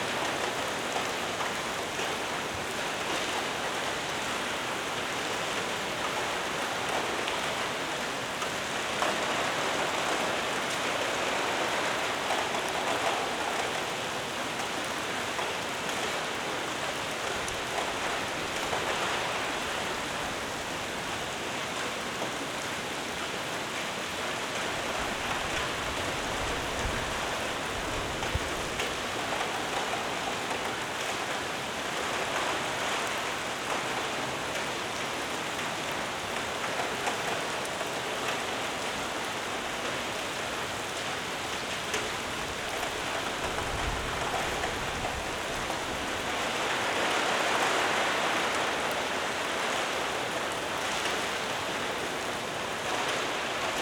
Punto Franco Nord, Trieste, Italy - intense rain in hall
waiting for the rain to end
(SD702, AT BP4025)